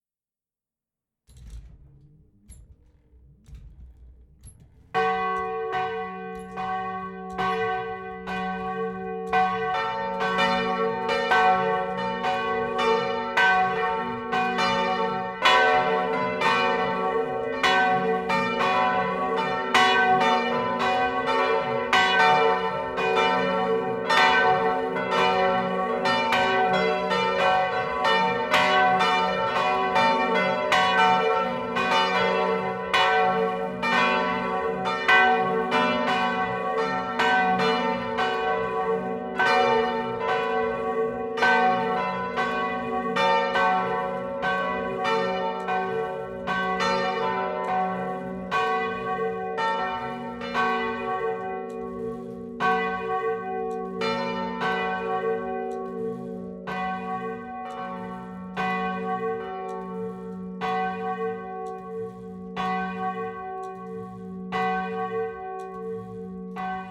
Rue Alphonse Foucault, Senonches, France - Senonches - Église Notre Dame
Senonches (Eure-et-Loir)
Église Notre Dame
volée Tutti - 3 cloches